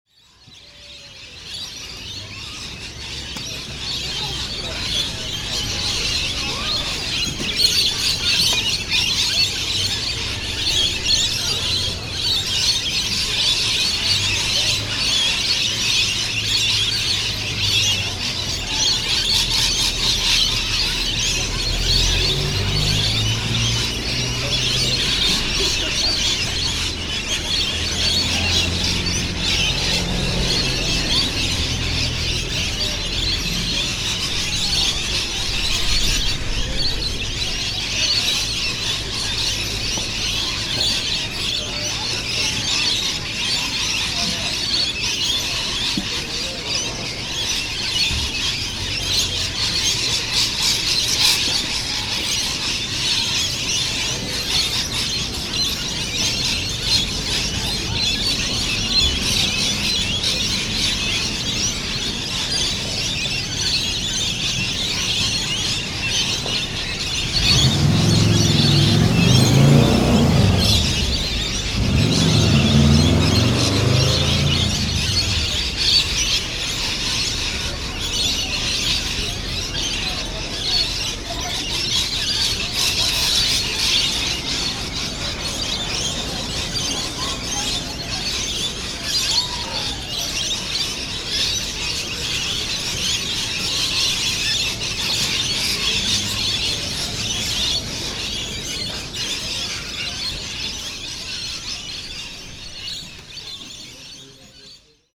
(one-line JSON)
{"title": "Brunswick Heads, NSW, Australia. Rainbow Lorikeets in a local park.", "date": "2010-02-12 17:30:00", "description": "A deafening flock of Rainbow Lorikeets drink nectar from flowering eucalyptus trees in a local park by the beach. Sounds of children and traffic can be heard, showing how human activity and wildlife exist side-by-side.", "latitude": "-28.54", "longitude": "153.55", "altitude": "6", "timezone": "Australia/NSW"}